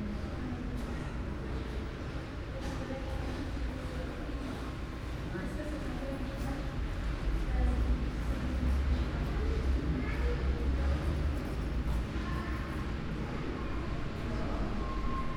Prague, Czech Republic - Pedestrian Tunnel from Žižkov to Karlín
recorded as part of Radio Spaces workshop in Prague
3 October 2012, 17:30